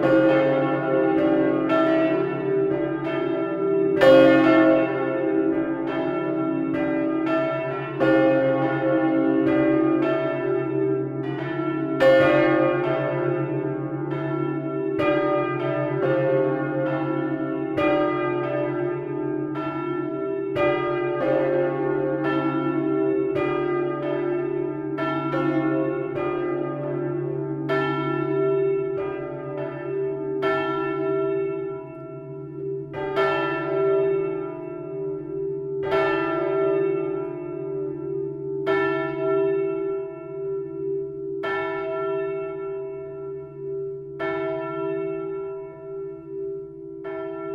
Enghien, Belgique - Enghien bell
Solo of the Enghien big bell. This is an old bell dating from 1754 and it weights 3 tons. Recorded inside the tower.
Edingen, Belgium, 25 May 2013